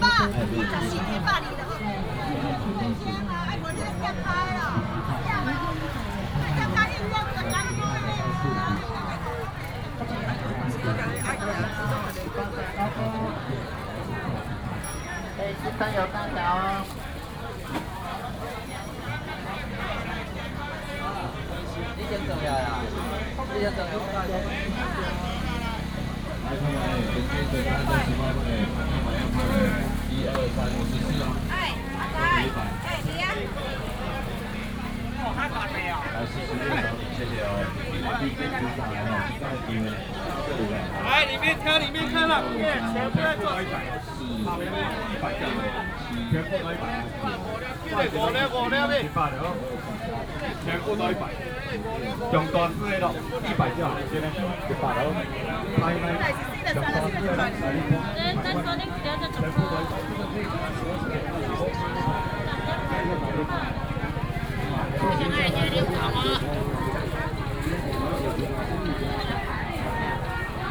Yongle St., Changhua City - Walking in the market
Walking in the traditional market
18 March, ~09:00